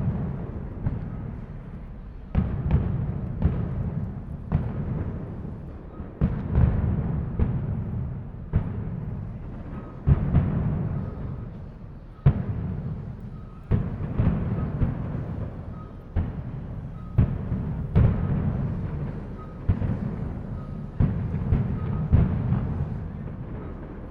Sant Francesc, València, Valencia, Spain - fireworks before las fallas
every day before the big event "las fallas" there s a fireworks with a certain sound choreography....
March 2016